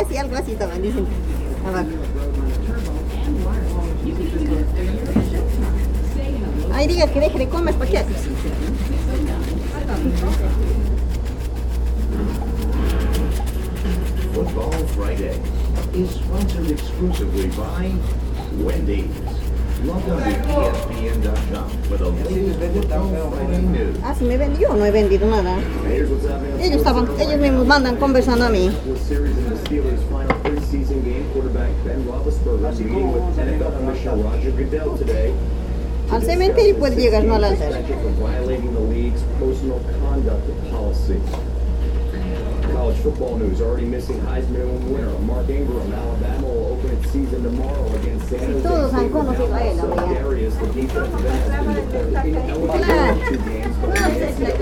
New York, Times Square, shoe cleaning
New York, NY, USA, 9 September 2010, 11:56